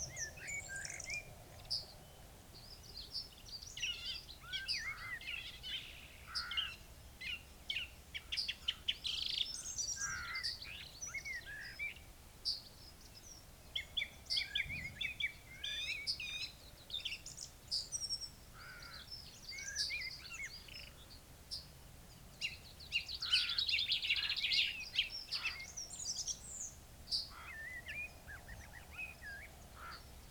Luttons, UK - Song thrush ride thru ...
Song thrush song soundscape ... until after 11 mins ... ish ... pair of horse riders pass through ... bird calls and song from ... song thrush ... yellowhammer ... blackbird ... corn bunting ... chaffinch ... dunnock ... red-legged partridge ... crow ... rook ... open lavaliers mics clipped to hedgerow ... one swear word ... background noise from sheep and traffic ...